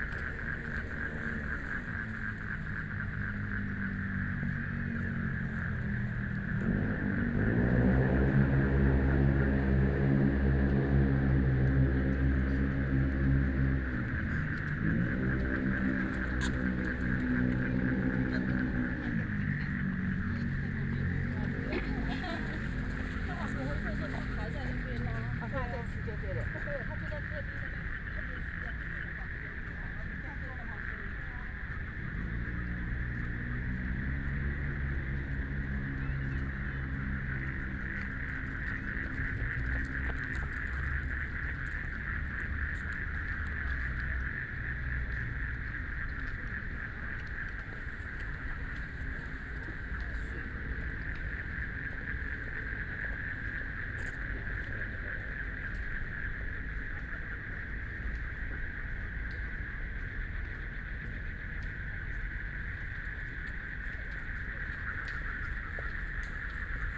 BiHu Park, Taipei City - Frogs sound
The park at night, Traffic Sound, People walking and running, Frogs sound
Binaural recordings
Taipei City, Taiwan